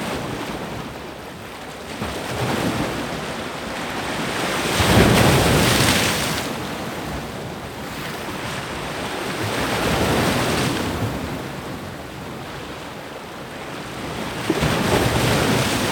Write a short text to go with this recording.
Hiddensee - waves hitting rocks, stiff breeze. [I used the Hi-MD recorder Sony MZ-NH900 with external microphone Beyerdynamic MCE 82 with windshield and fur]